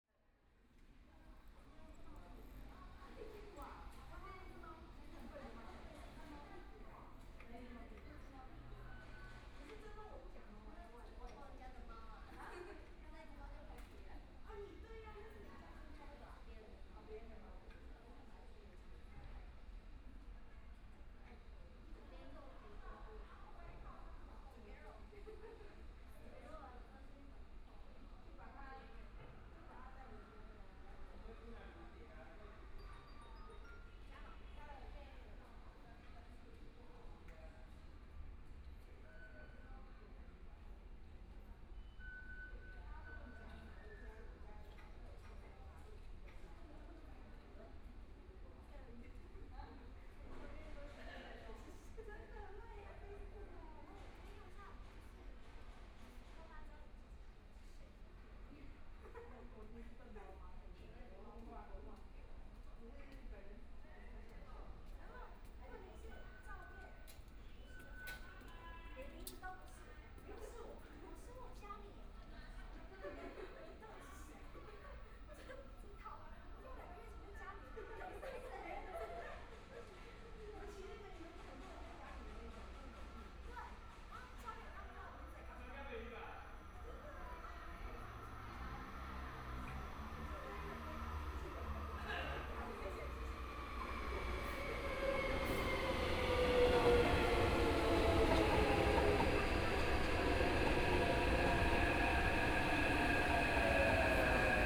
Fuxinggang Station, Taipei - On the platform
In the MRT station platform, Waiting for the train
Binaural recordings, ( Proposal to turn up the volume )
Zoom H4n+ Soundman OKM II
北投區, 台北市 (Taipei City), 中華民國, 16 February 2014